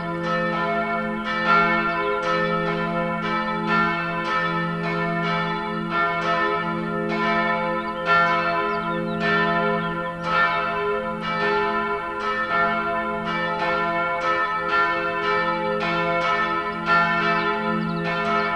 Litoměřice, Czech Republic - Noon bells
Noon bells at Domske namesti in Litomerice. A spring soundscape in the centre of a small historical town.
jiri lindovsky